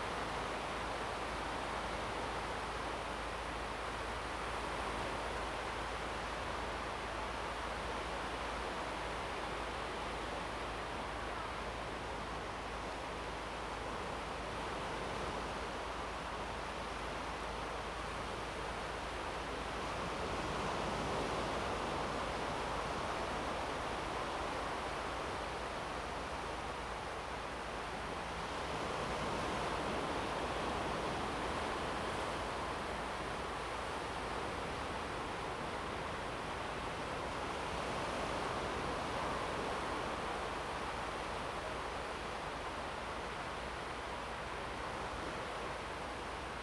杉福生態園區, Hsiao Liouciou Island - the waves
Waterfront Park, sound of the waves
Zoom H2n MS +XY
Pingtung County, Liuqiu Township, 肚仔坪路2號, November 2014